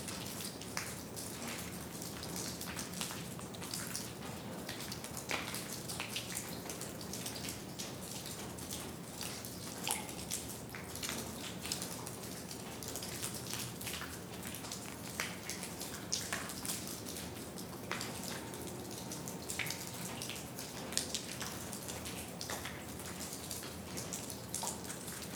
frühjahr 07 morgens - regenwassser einlauf im "zeittunel" nahe dem grubenausgang - hier ohne exponate
project: :resonanzen - neandereland soundmap nrw - sound in public spaces - in & outdoor nearfield recordings